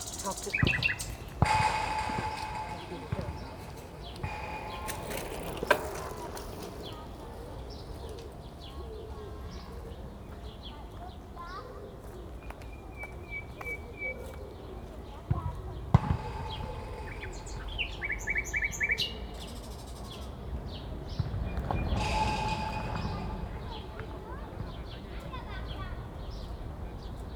Pestalozzistraße, Berlin, Germany - Pankow Soundwalks anniversary in Covid-19 times: Extract 4 Nightingales and footballs striking the fence
Extract 4: Nightingales, footballs striking the fence. The 5 Pankow Soundwalks project took place during spring 2019 and April 27 2020 was the first anniversary. In celebration I walked the same route starting at Pankow S&U Bahnhof at the same time. The coronavirus lockdown has made significant changes to the soundscape. Almost no planes are flying (this route is directly under the flight path into Tegel Airport), the traffic is reduced, although not by so much, and the children's playgrounds are closed. All important sounds in this area. The walk was recorded and there are six extracts on the aporee soundmap.